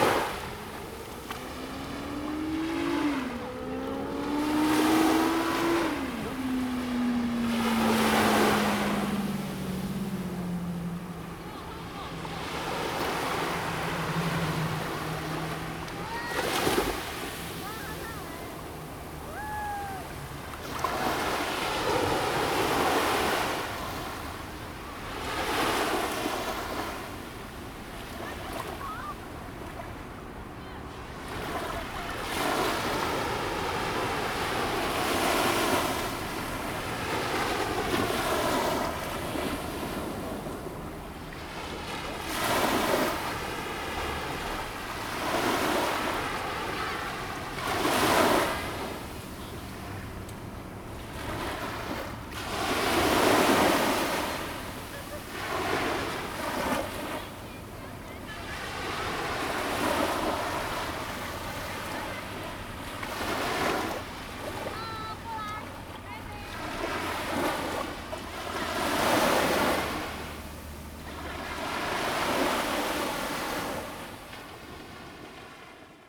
萬里里, Wanli District, New Taipei City - Sandy beach
sound of the waves, At the beach
Zoom H2n MS+XY +Sptial Audio
Wanli District, New Taipei City, Taiwan, 4 August 2016, 10:55